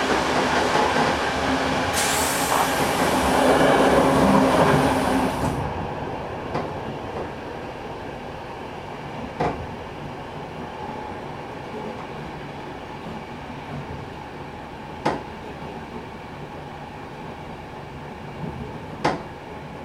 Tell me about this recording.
On the way to Prague the train rattles less and less on the rails. Here, arriving at Pardovice station. Coming all the way from Turkey, the auditory impression is distinctly: less romantic sounds on more western tracks.